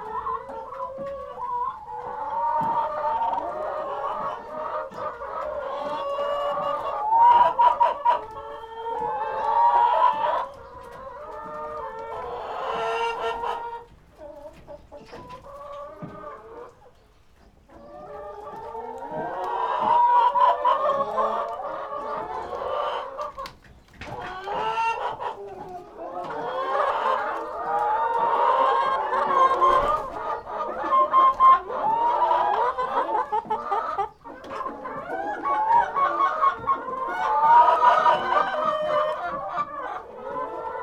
Hintermeilingen, Waldbrunn (Westerwald), Deutschland - hen house
hen house sounds, they will be broilers soon...
(Sony PCM D50)